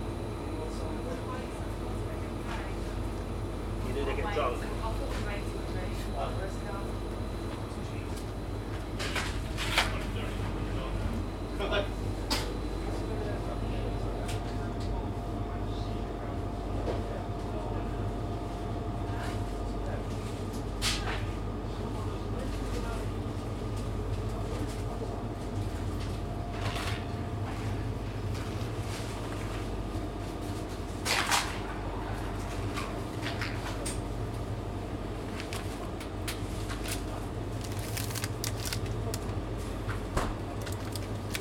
Burgess Road, Southampton, UK - 054 Shopping hum